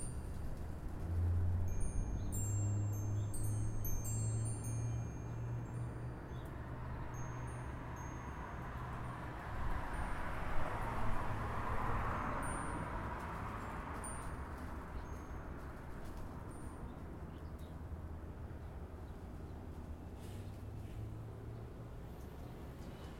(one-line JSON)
{"title": "Ditmars Steinway, Queens, NY, USA - Wind Chimes On A Front Porch", "date": "2017-03-03 12:25:00", "description": "Wind Chimes On A Front Porch", "latitude": "40.77", "longitude": "-73.90", "altitude": "13", "timezone": "America/New_York"}